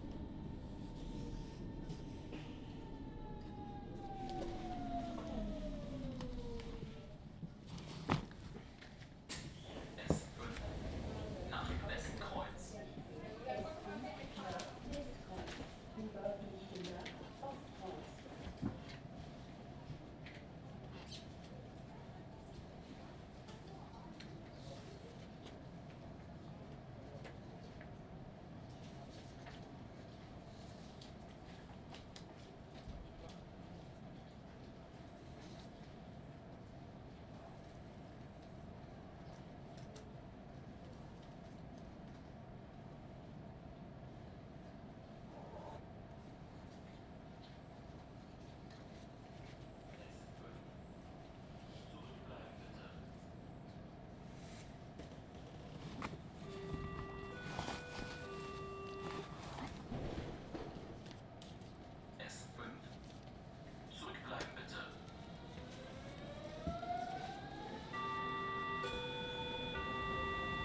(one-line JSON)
{"title": "Petershagen Nord, Petershagen/Eggersdorf, Deutschland - S5 Recording, Station Petershagen", "date": "2022-02-08 13:05:00", "description": "This recording was done inside the S5, with a zoom microphone. The recording is part of project where i try to capture the soundscapes of public transport ( in this case a train).", "latitude": "52.53", "longitude": "13.79", "altitude": "53", "timezone": "Europe/Berlin"}